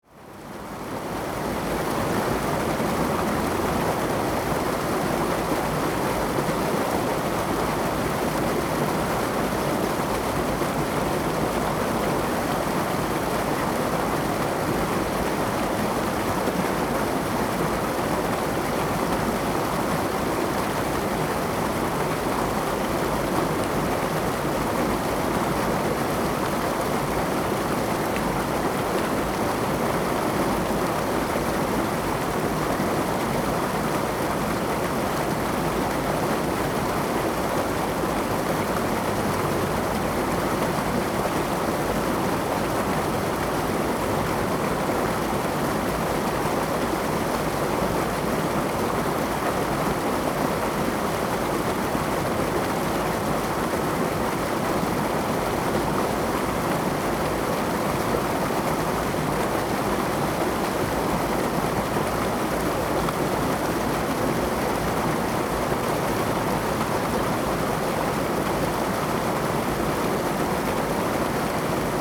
吉安溪, Ji'an Township - Streams
Streams of sound, Hot weather
Zoom H2n MS+XY
Hualien County, Taiwan, 28 August 2014